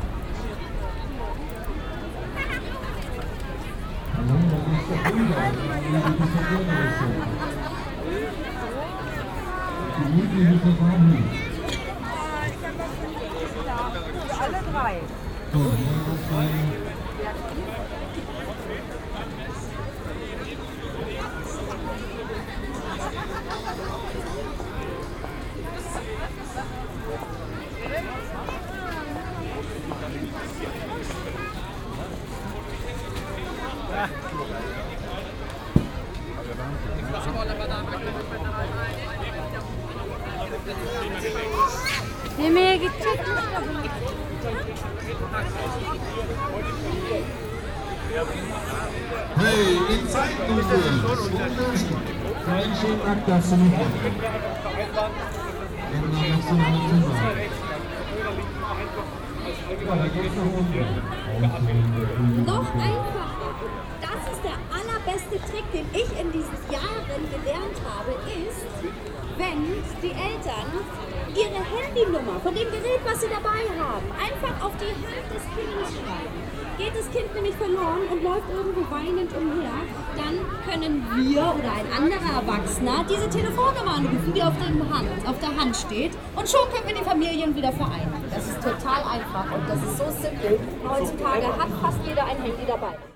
cologne, altstadt, heumarkt, weltkindertag 08
soundmap nrw: social ambiences, art places and topographic field recordings